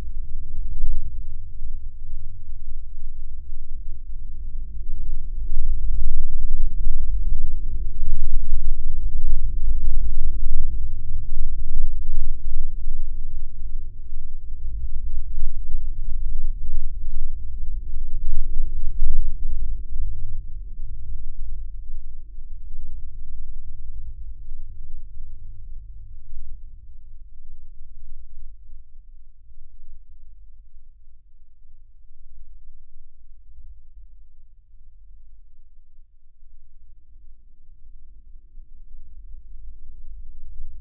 Dičiūnai, Lithuania, wooden fence
Abndoned sand quarry. Some wooden fences. Seismic microphone recording, very low frequancies...